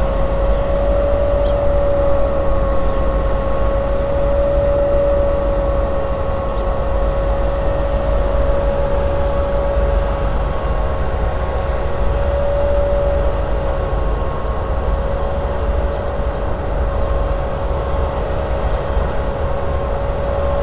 Hangar à Bananes, Boulevard des Antilles, Nantes, France - A compact street sweeper is cleaning the Ground, Hangar A Bananes, Nantes